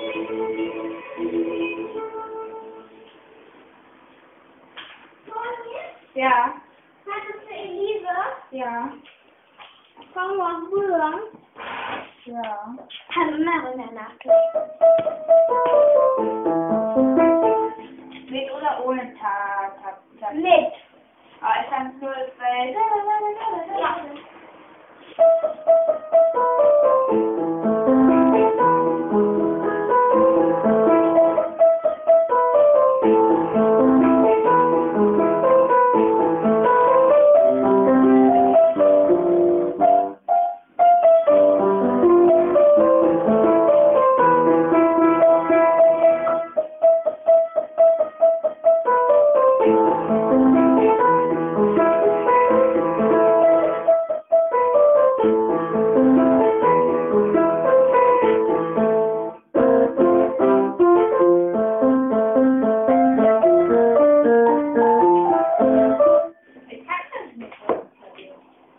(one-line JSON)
{"title": "Popocatepetl - musikalische Freundinnen", "date": "2007-10-11 10:48:00", "description": "Lola and Toni are talking about music. Toni plays Pour Elise from Beethoven.", "latitude": "52.53", "longitude": "13.40", "altitude": "50", "timezone": "Europe/Berlin"}